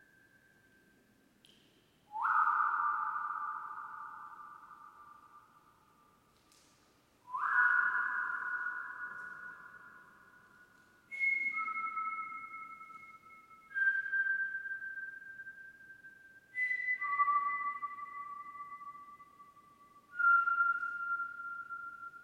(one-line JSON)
{"title": "La Chaise-Dieu, France - salle de l'écho", "date": "2013-05-02 16:06:00", "description": "une pièce carrée, voutée, en pierre... réputée pour la qualité de son acoustique (près de 4 secondes de réverbération). ici quelques jeux de voix, de sifflet pour faire sonner différentes fréquences del'espace.", "latitude": "45.32", "longitude": "3.70", "altitude": "1076", "timezone": "Europe/Paris"}